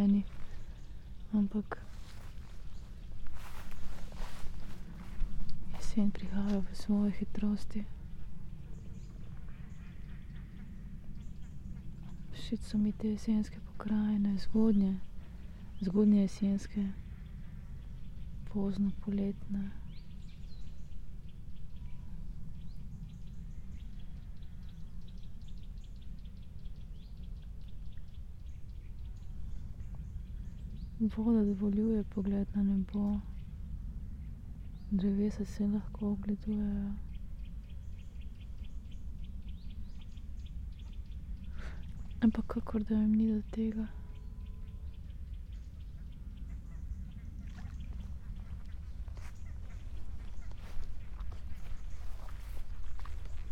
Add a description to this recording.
late summer ambience while walking the poem